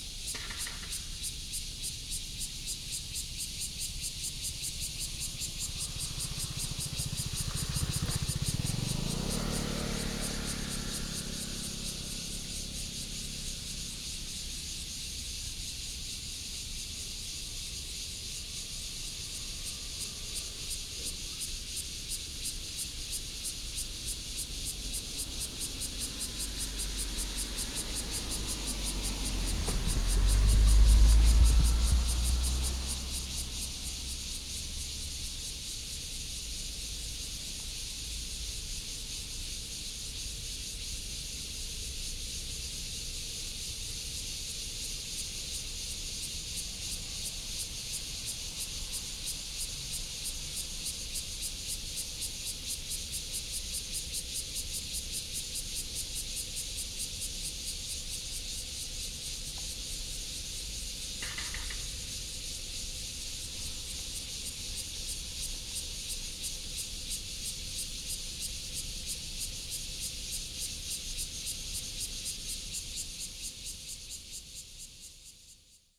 汴洲公園, Taoyuan Dist. - in the park
in the park, Cicada cry, Face funeral home, traffic sound